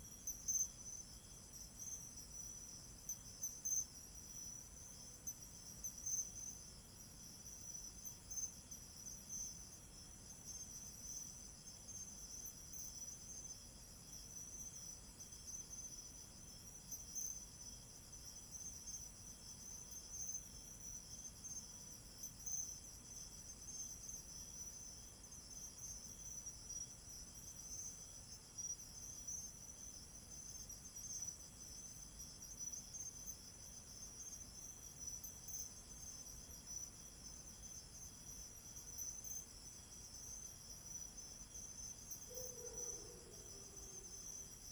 Ln., Haipu Rd., Xiangshan Dist., Hsinchu City - Insects
Insects sound, Dog sounds, Zoom H2n MS+XY
Hsinchu City, Taiwan, September 2017